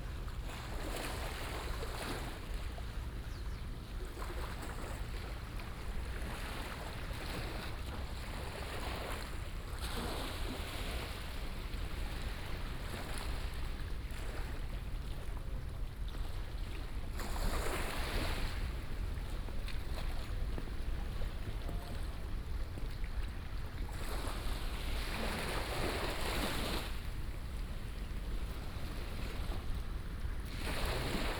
六塊厝漁港, Tamsui Dist., New Taipei City - Small fishing port
Small fishing port, Sound of the waves
16 April, 07:25